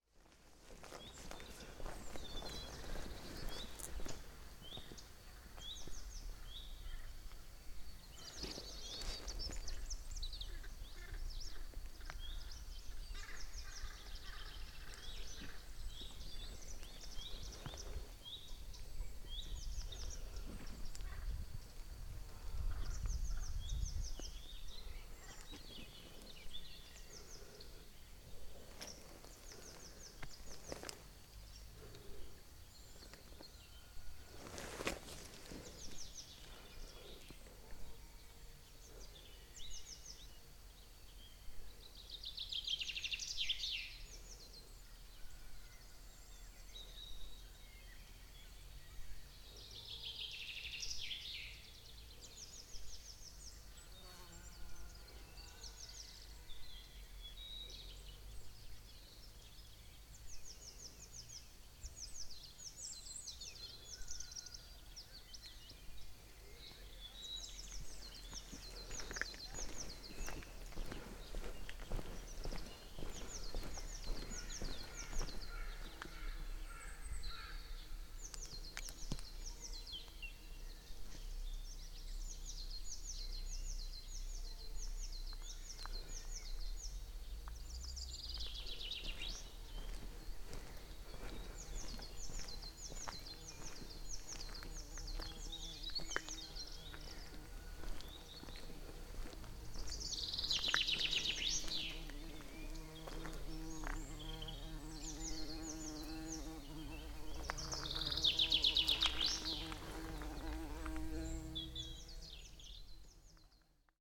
Tarnac, France - WLD 2014 GROIS CORBOU
stopping to listen to the common soundscape in the late afternoon of early spring… frogs, crows, birds, bumblebee, far away voices...